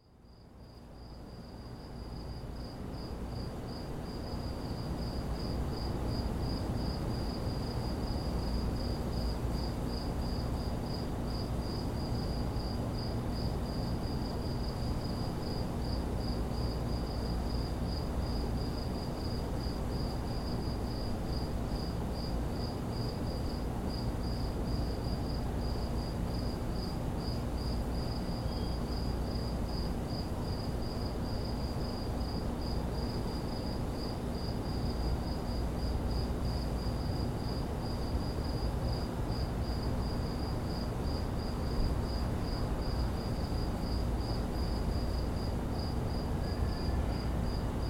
Woodbine Ave, East York, ON, Canada - Crickets, late evening.
Common field crickets, with a brief intervention from a passing aeroplane.